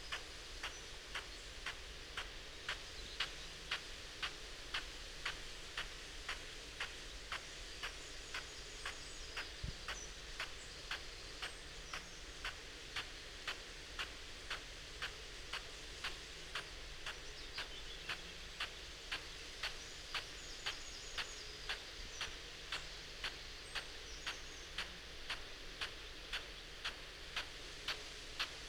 Croome Dale Ln, Malton, UK - field irrigation system ...
field irrigation system ... parabolic ... a Bauer SR 140 ultra sprinkler to Bauer Rainstart E irrigation unit ... what fun ...
England, United Kingdom, 20 May